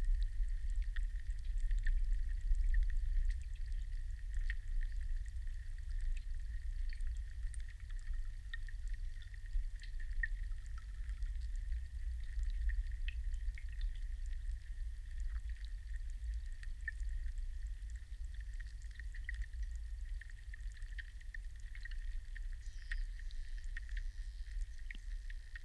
underwater and tractor, Leliūnų sen., Lithuania
hydrophones capture the low drone of tractor on the close road